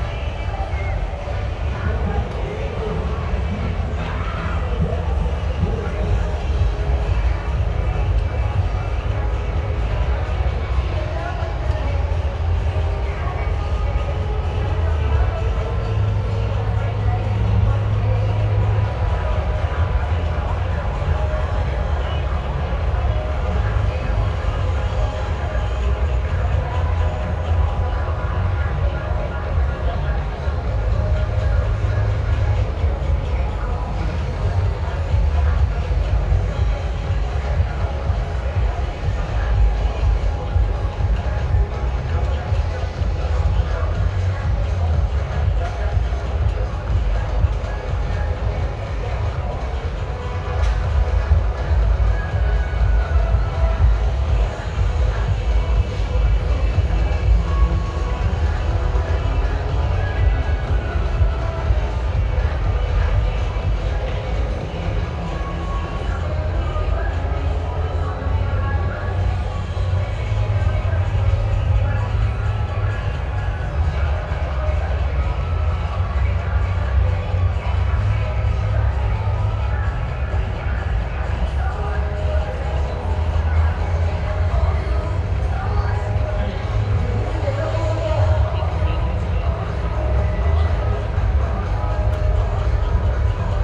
{"title": "Fun Fair, Park Altánek Stromovka - Fun Fair at night", "date": "2019-03-02 20:00:00", "description": "At night from the border of the fun fair, just behind the fence, musics are mixing together.\nRecorded by an ORTF setup Schoeps CCM4 x 2 on a Cinela Suspension + Windscreen\nSound Devices mixpre6 recorder\nGPS: 50.107878,14.425690\nSound Ref: CZ-190302-009", "latitude": "50.11", "longitude": "14.43", "altitude": "182", "timezone": "GMT+1"}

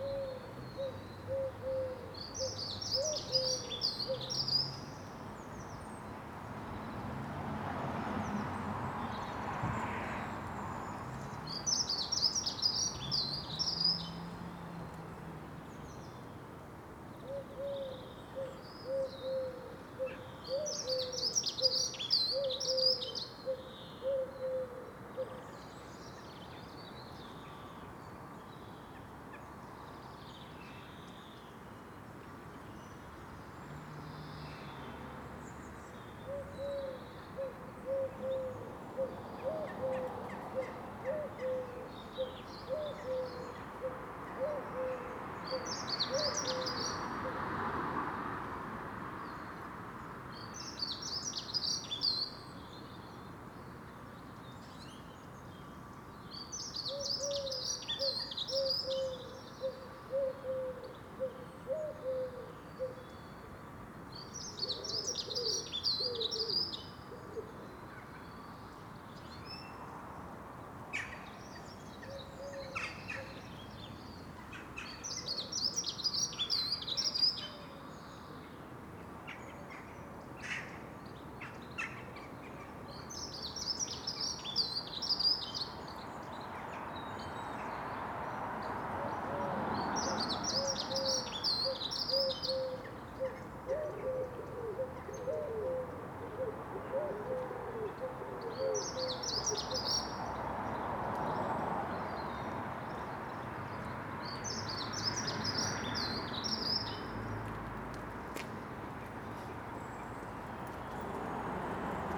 {"title": "Contención Island Day 74 outer west - Walking to the sounds of Contención Island Day 74 Friday March 19th", "date": "2021-03-19 10:39:00", "description": "The Drive Westfield Drive Fernville Road Kenton Road Montague Avenue Wilson Gardens\nRotted gatepost\nfront wall pointing gone\ndunnock singing\nElectric van\ndelivering brown parcels\nthat are green\nGull cry ‘daw chack\nbin thump\nfinch and electric van wheeze", "latitude": "55.00", "longitude": "-1.63", "altitude": "79", "timezone": "Europe/London"}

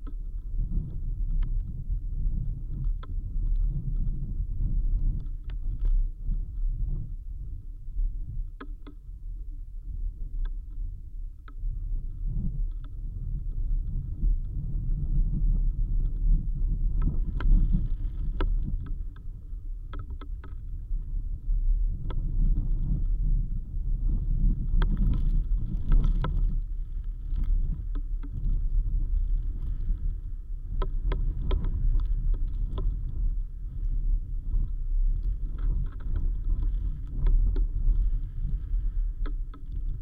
{"title": "Mospalomas dunes, Gran Canaria, a root in a sand", "date": "2017-01-29 13:40:00", "description": "contact misrophones placed on a root buried in a dune's sand", "latitude": "27.74", "longitude": "-15.59", "altitude": "119", "timezone": "GMT+1"}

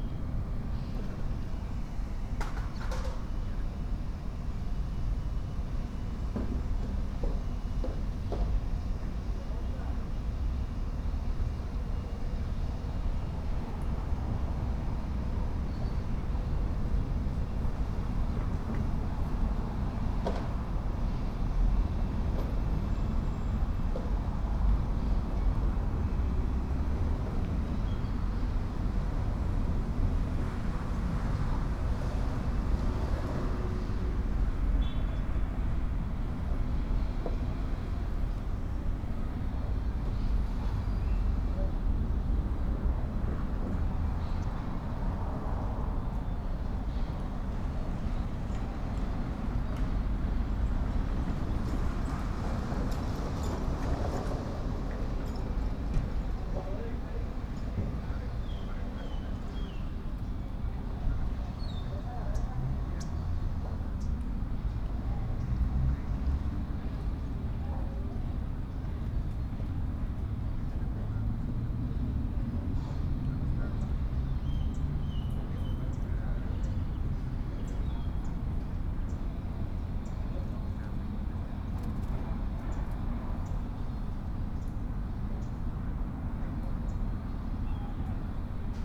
{"title": "Panorama Park, Av. Panorama, Valle del Campestre, León, Gto., Mexico - Por el parque de panorama.", "date": "2020-11-30 14:43:00", "description": "Around the panorama park.\nI made this recording on November 30th, 2020, at 2:43 p.m.\nI used a Tascam DR-05X with its built-in microphones and a Tascam WS-11 windshield.\nOriginal Recording:\nType: Stereo\nEsta grabación la hice el 30 de noviembre de 2020 a las 14:43 horas.", "latitude": "21.15", "longitude": "-101.69", "altitude": "1823", "timezone": "America/Mexico_City"}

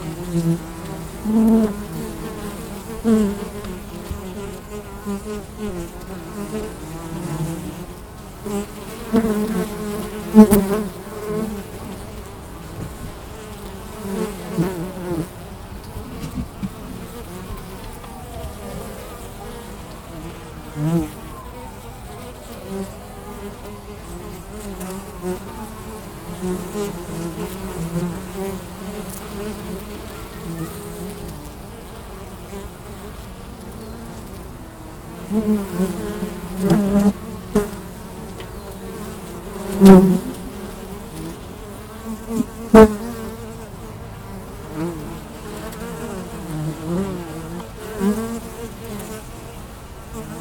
{"title": "Na Křivce, Praha, Czechia - Včely v Michli", "date": "2022-05-19 14:01:00", "description": "Včely na svažité zahradě na Plynárnou", "latitude": "50.06", "longitude": "14.47", "altitude": "241", "timezone": "Europe/Prague"}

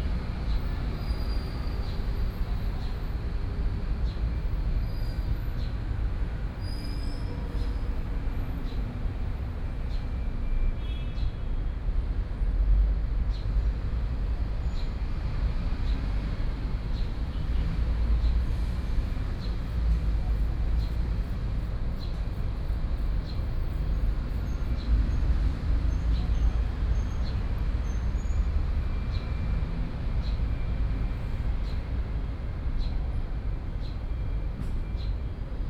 {"title": "附中公園, Taipei City - in the Park", "date": "2015-06-27 18:10:00", "description": "Bird calls, Traffic noise, Very hot weather", "latitude": "25.04", "longitude": "121.54", "altitude": "12", "timezone": "Asia/Taipei"}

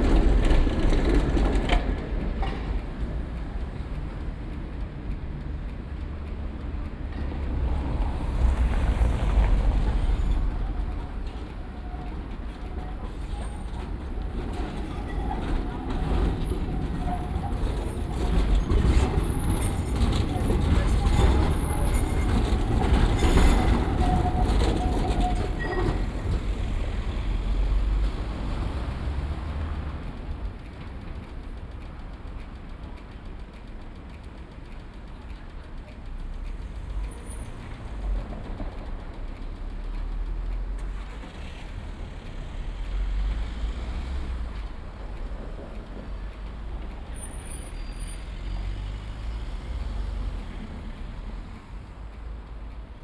{"title": "Prague, Czech Republic - Narodni Tickers", "date": "2016-03-10 16:00:00", "description": "On a busy junction, with two nicely out of sync ticker signals to help the visually impaired know when to cross, one on the left one on the right. cars and trams passing. Soundman binaural mics / Tascam DR40.", "latitude": "50.08", "longitude": "14.41", "altitude": "198", "timezone": "Europe/Prague"}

